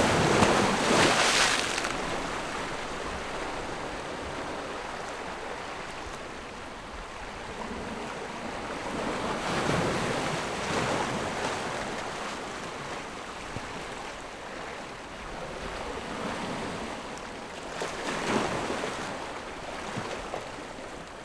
On the coast, sound of the waves
Zoom H6 +Rode NT4
連江縣, 福建省, Mainland - Taiwan Border, 14 October 2014, 13:54